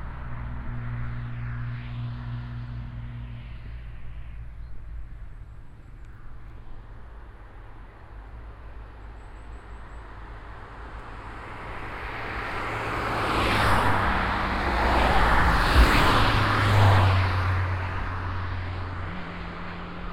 road to dasbourg, haaptstrooss, traffic
on the road to Dasbourg at a parking lot. The sound of the river Our and traffic echoing in the valley. Passing by on the street some cars and two motorbikes.
Straße nach Dasburg, Haaptstrooss, Verkehr
Auf der Straße nach Dasburg auf einem Parkplatz. Das Geräusch vom Fluss Our und von Verkehr, der im Tal widerhallt. Auf der Straße fahren einige Autos und zwei Motorräder vorbei.
route en direction de Dasbourg, trafic
Sur une aire de stationnement de la route en direction de Dasbourg. Le son de la rivière Our et le trafic routier qui se répercute dans la vallée. Dans le fond, on entend des voitures et deux motos sur la route.